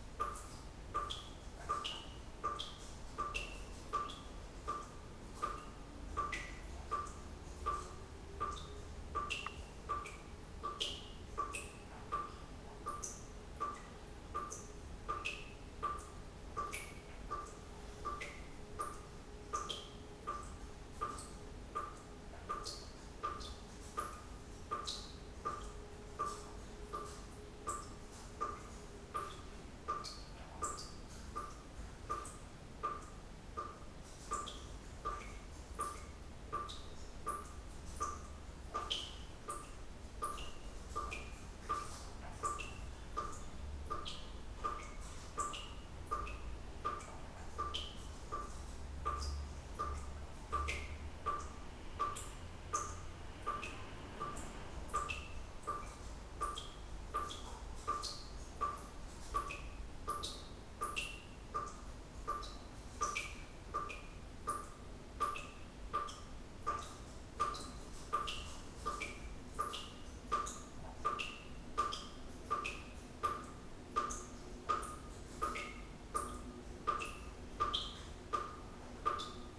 Stalingradlaan, Brussels, Belgium - dripping tap
dripping tap, kitchen at Stalingradlaan, saturday morning
(Sony PCM D50)
Région de Bruxelles-Capitale - Brussels Hoofdstedelijk Gewest, België - Belgique - Belgien, European Union, June 2013